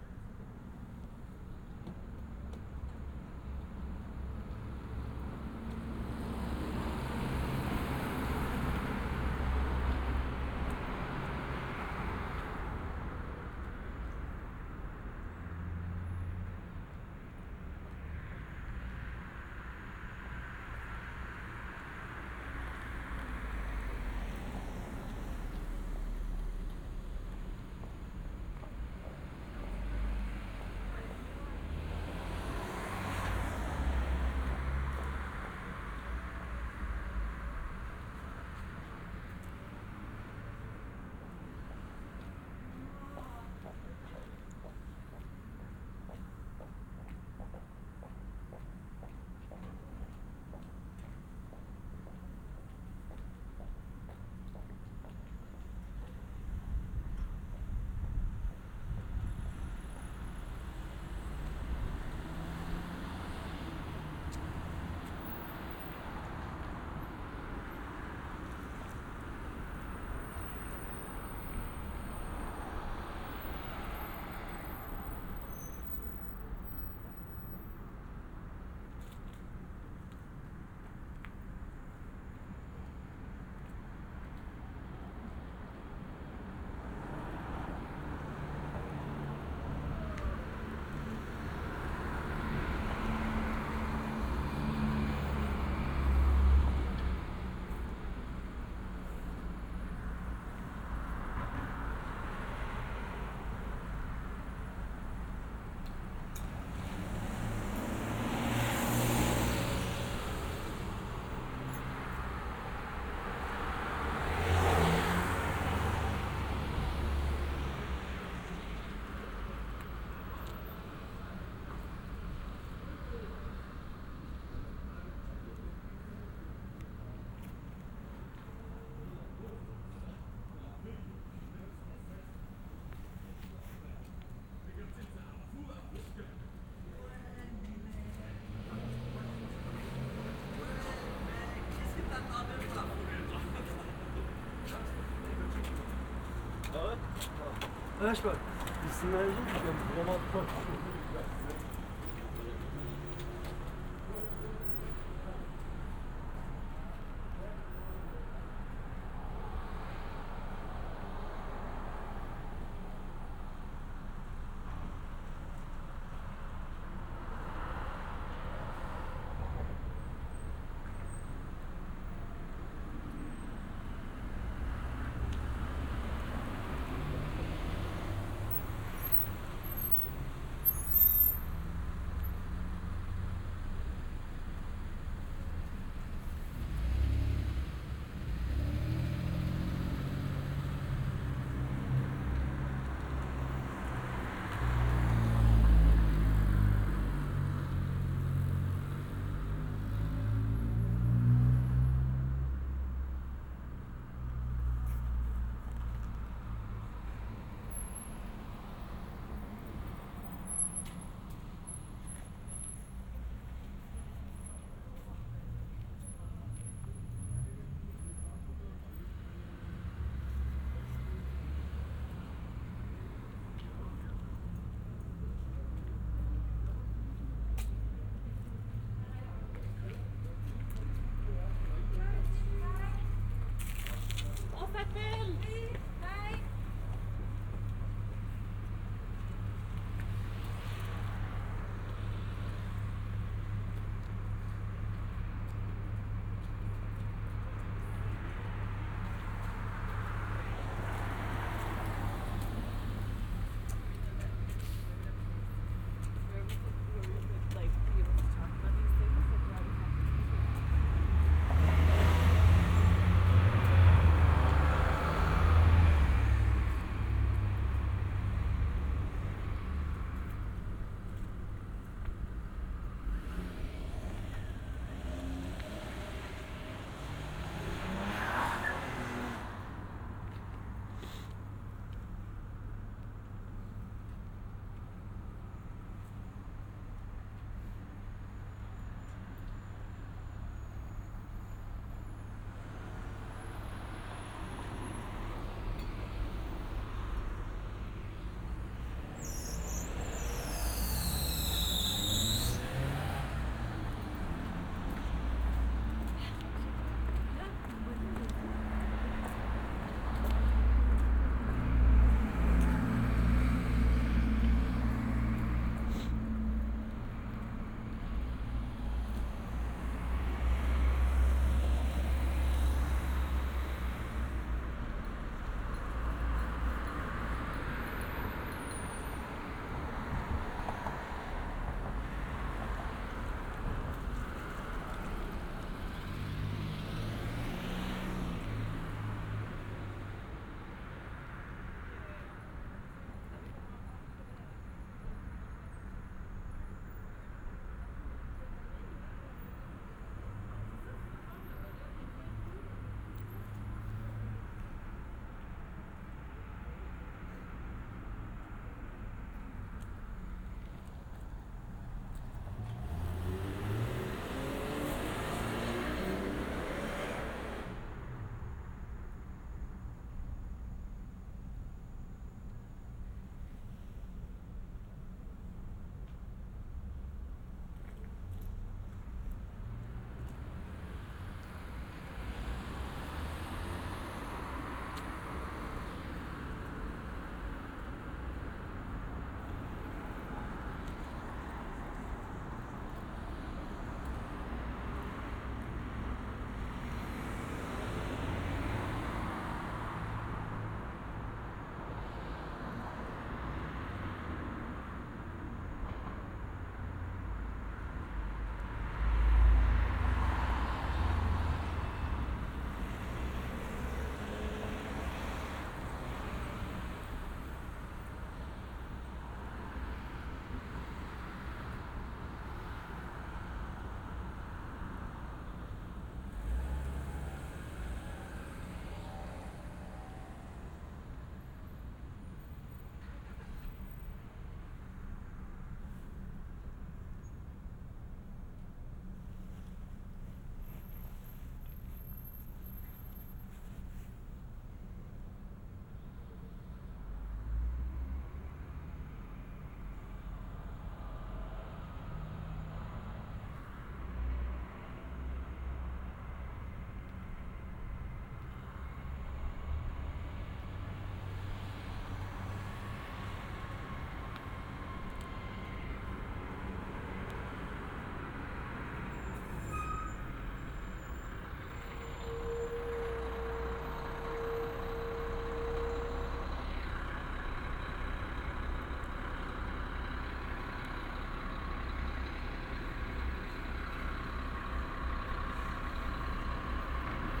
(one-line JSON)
{"title": "Montreal: Parc & Laurier (waiting for Bus #51) - Parc & Laurier (waiting for Bus #51)", "date": "2009-02-12 00:38:00", "description": "equipment used: Olympus LS-10 & OKM Binaurals\nWaiting for bus 51 to arrive at Parc & Laurier to take me home. Listen for the late-night aggressive drivers.", "latitude": "45.52", "longitude": "-73.60", "altitude": "83", "timezone": "America/Montreal"}